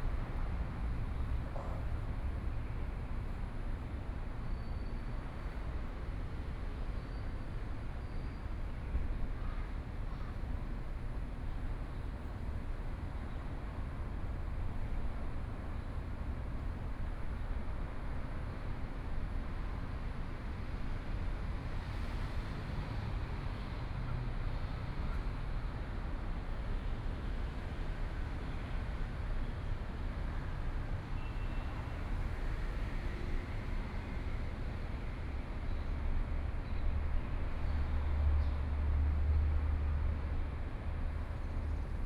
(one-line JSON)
{"title": "ZhengShou Park, Taipei City - Sitting in the park", "date": "2014-04-04 16:59:00", "description": "Sitting in the park, Birds sound, Traffic Sound\nPlease turn up the volume a little. Binaural recordings, Sony PCM D100+ Soundman OKM II", "latitude": "25.05", "longitude": "121.53", "altitude": "17", "timezone": "Asia/Taipei"}